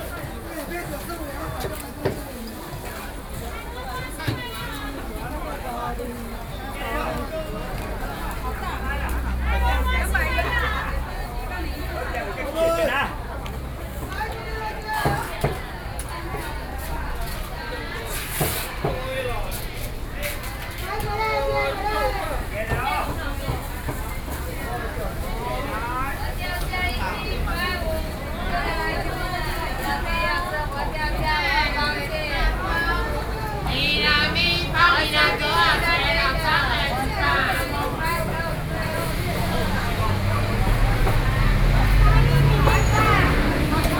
Ln., Sec., Sanhe Rd., Sanchong Dist., New Taipei City - Traditional markets